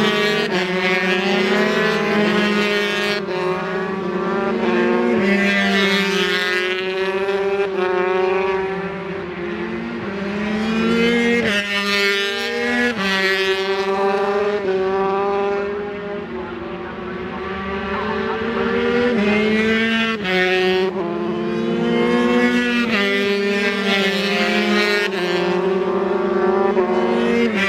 British Superbikes 2004 ... 125 qualifying ... Edwina's ... one point stereo mic to minidisk ...